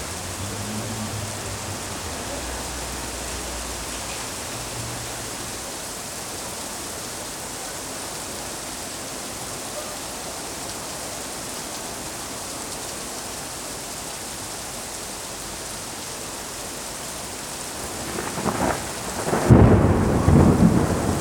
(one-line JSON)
{"title": "Brabanter Str., Köln, Deutschland - Summer storm", "date": "2021-06-04 20:30:00", "description": "Summer storm, Cologne city centre, Tascam WPM-10 mics, MOTU traveler Mk3", "latitude": "50.94", "longitude": "6.94", "altitude": "56", "timezone": "Europe/Berlin"}